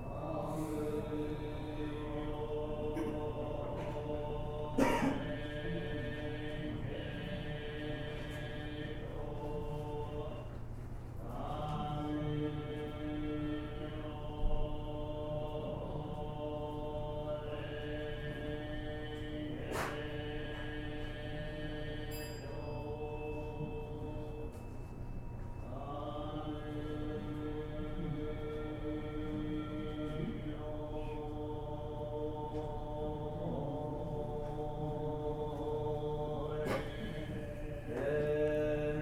{"date": "2010-06-04 12:02:00", "description": "kyo 経 浜松 葬式 浜北", "latitude": "34.78", "longitude": "137.74", "altitude": "60", "timezone": "Asia/Tokyo"}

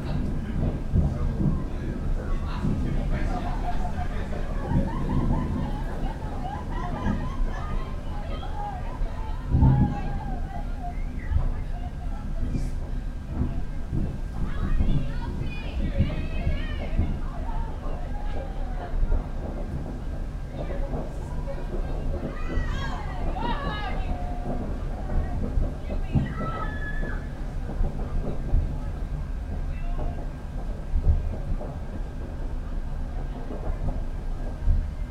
{"title": "Ladlands, London, UK - Guy Fawkes Balcony", "date": "2016-11-05 19:45:00", "description": "Recorded with a pair of DPA 4060s and a Maratz PMD 661", "latitude": "51.45", "longitude": "-0.07", "altitude": "75", "timezone": "Europe/London"}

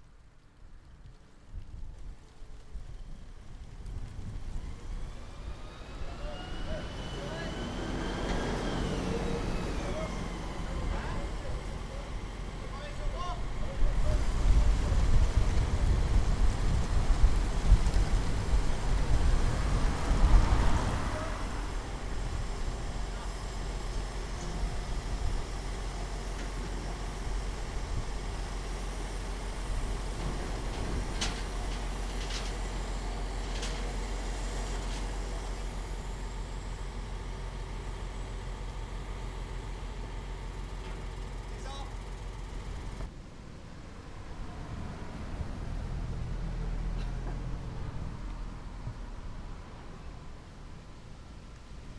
{"title": "France - Ruelle du Petit Maroc", "date": "2015-09-22 19:55:00", "description": "Ambiance civile dans une rue au Petit Maroc, à Saint-Nazaire.", "latitude": "47.27", "longitude": "-2.20", "altitude": "7", "timezone": "Europe/Paris"}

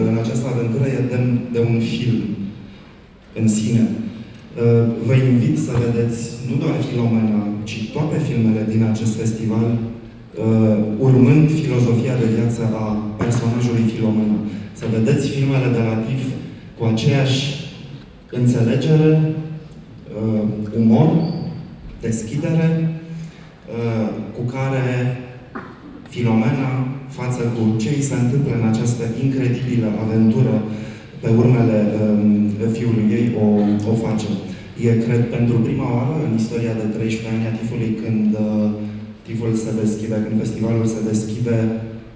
{"title": "Old Town, Klausenburg, Rumänien - cluj, case de cultura, TIFF opening 2014", "date": "2014-05-30 21:30:00", "description": "At the TIFF opening 2014 inside the main hall of the casa de cultura a studentilor.\nThe sound of a short project Trailer, then the voices of the festival director Tudor Giurgiu and the artistic director Mihai Chirilov.\ninternational city scapes - field recordings and social ambiences", "latitude": "46.77", "longitude": "23.59", "altitude": "354", "timezone": "Europe/Bucharest"}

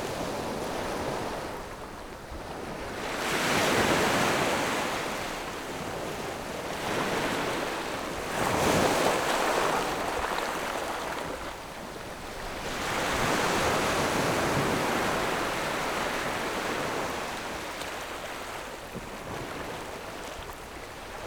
{"title": "科蹄澳, Nangan Township - Small beach", "date": "2014-10-14 11:59:00", "description": "Small beach, Sound of the waves\nZoom H6+ Rode NT4", "latitude": "26.16", "longitude": "119.92", "altitude": "21", "timezone": "Asia/Taipei"}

